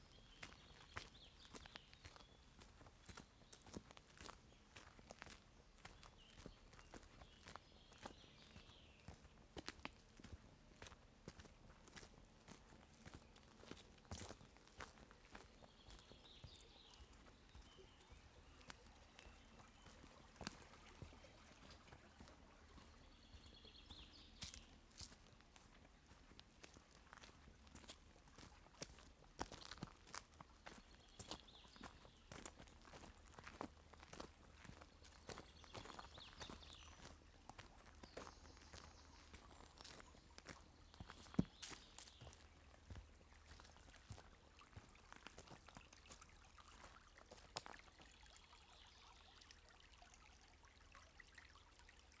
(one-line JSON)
{"title": "Camborne, Cornwall, UK - A Walk Down A Footpath", "date": "2015-06-10 16:00:00", "description": "I took a walk in the Pendarves Woods and decided to record part of my journey. I used DPA4060 microphones and a Tascam DR100.", "latitude": "50.19", "longitude": "-5.30", "altitude": "91", "timezone": "Europe/London"}